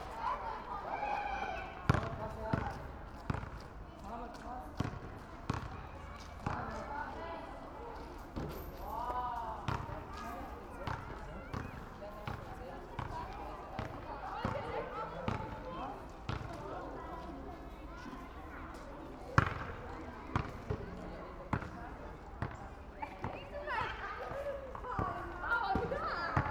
Bellevue Park, Bindermichl Tunnel, Linz - playground and basketball field
playground ambience late afternoon
(Sony PCM D50)
Oberösterreich, Österreich